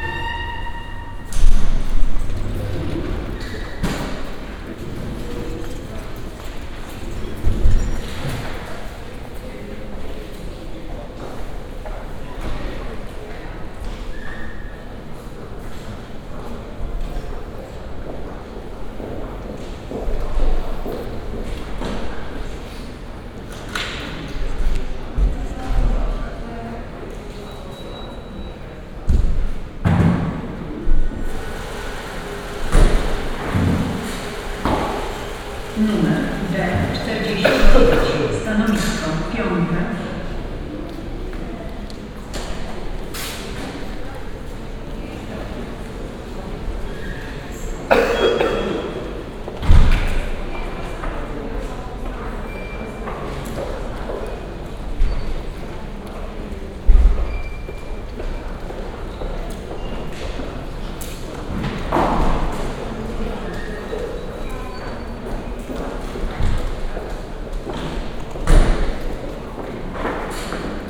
Poznan, Piatkowo district - PKO bank
(binaural) ambience of a specious hall where bank individual customers get their business handled. (sony d50 + luhd pm01bin)
Poznań, Poland, 21 September 2015, ~14:00